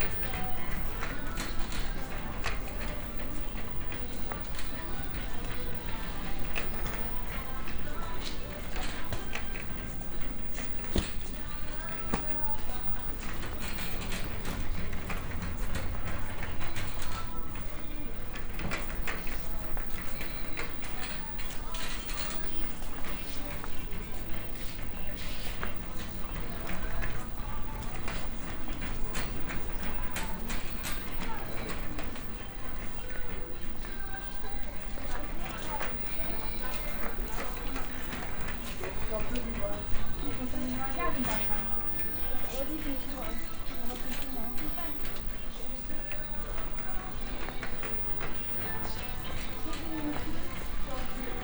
cologne, butzweilerhof, inside a swedish furniture market hall
not visible on the map yet - new branch house of a swedish furniiture company - here atmo in the market hall plus speaker advertisment and muzak ambience
soundmap nrw: social ambiences/ listen to the people in & outdoor topographic field recordings